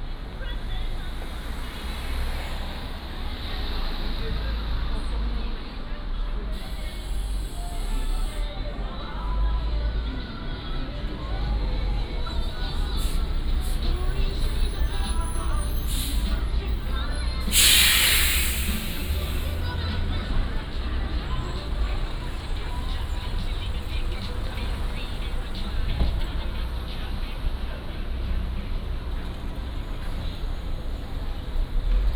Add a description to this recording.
Walking through the different neighborhoods and shops area, Traffic Sound